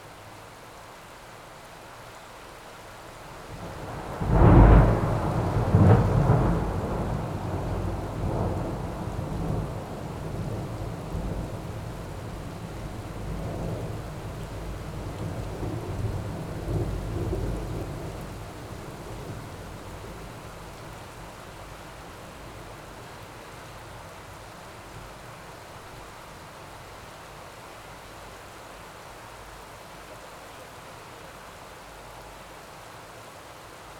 Poznan, Mateckiego Street - suppressed storm
sounds of rain and thunder recorded over an ajar window.
Poznań, Poland, 23 April 2014